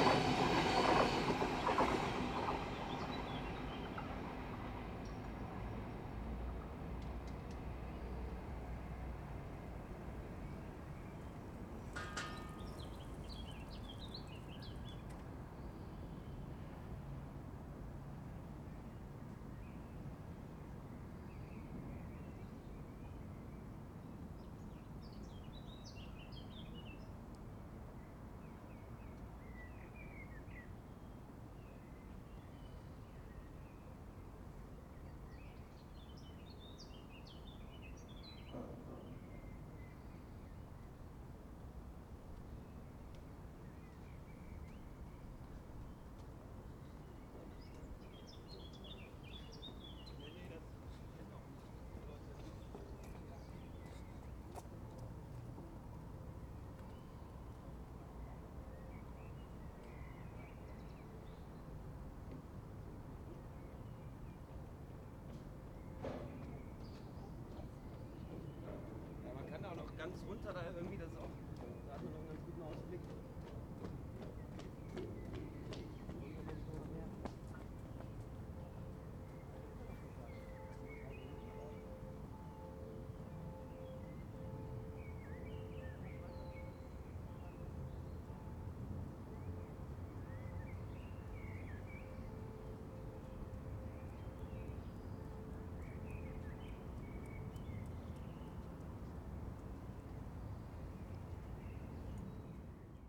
köln, mediapark, bridge - trains at different speed
pedestrian bridge, different trains, bikers, pedestrian, flies and a dog passing at different speeds on a late spring evening, nice weather.